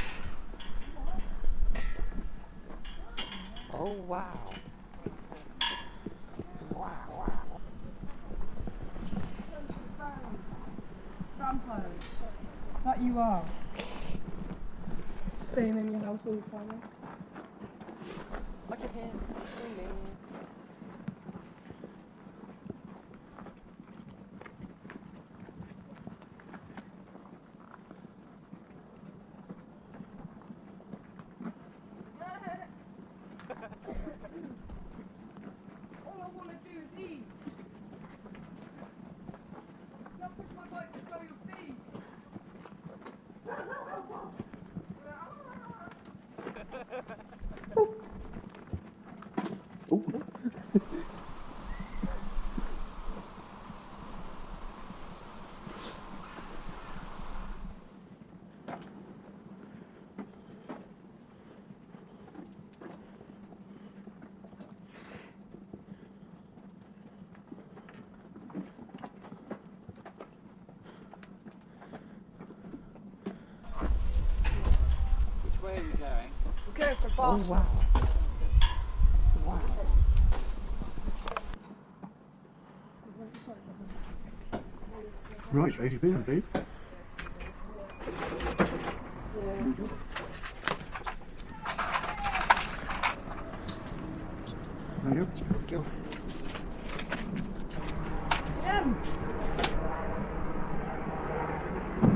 Foreland - a sound walk we took
England, United Kingdom, European Union